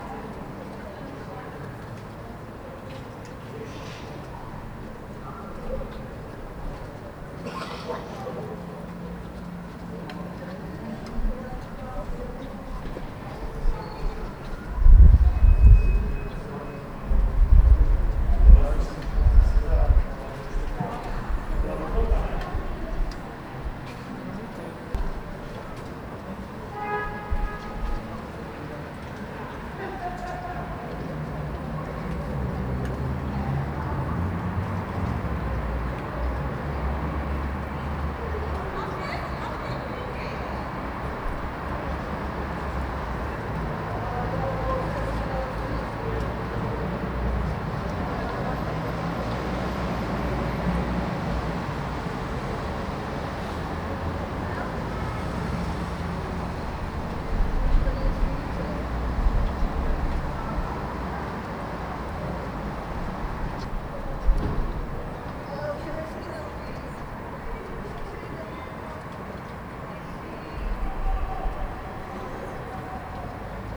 Trida miru, Pardubice, Česko - Trida miru
Recorded as part of the graduation work on sound perception.